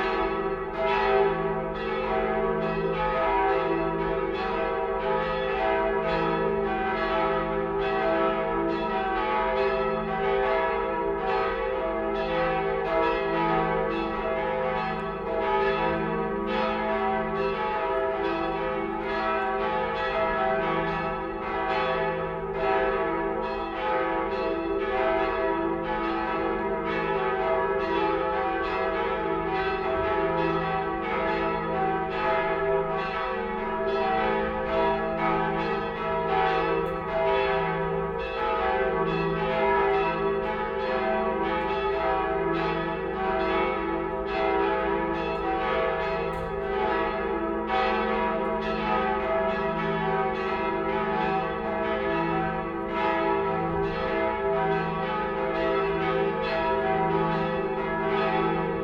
Katedralna, Opole, Poland - (43) The cathedral bells
Binaural recording of the cathedral bells in Opole.
recorded with Soundman OKM + Zoom H2n
sound posted by Katarzyna Trzeciak